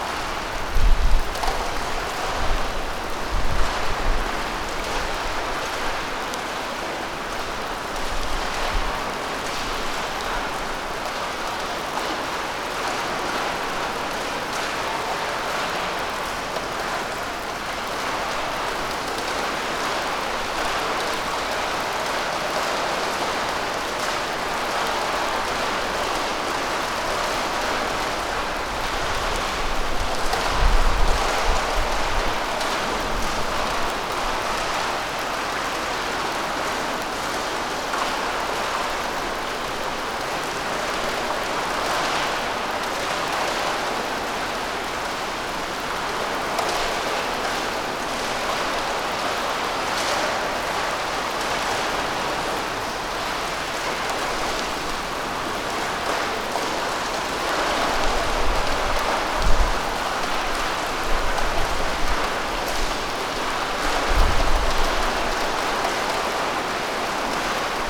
Punto Franco Nord, Trieste, Italy - waterish Ekho
dropping ambience - abandoned, spacious hall in Trieste old port, roof full of huge holes, in- and outside rain and winds ...
11 September, 13:35